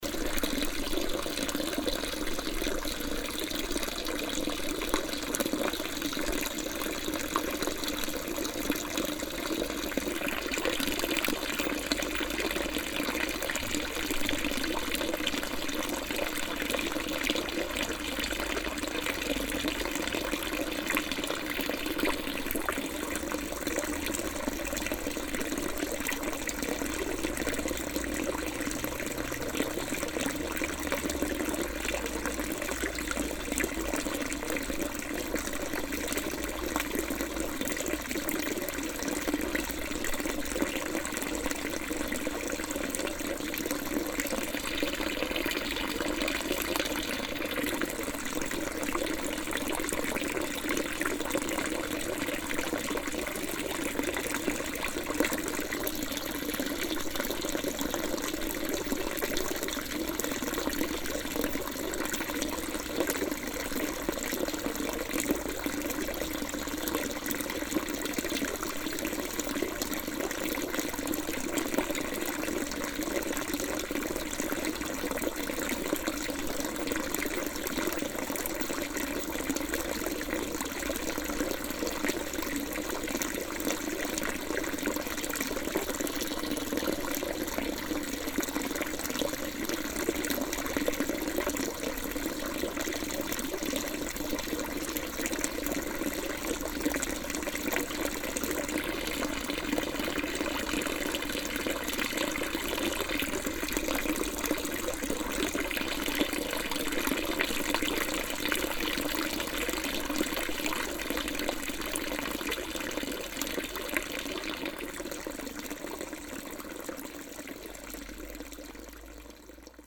rudolstadt, castle ascent, fountain
At another historical street fountain, that in former times may provided drinking water for passengers. The sound of the floating water.
soundmap d - topographic field recordings and social ambiences
Rudolstadt, Germany, 6 October 2011, ~16:00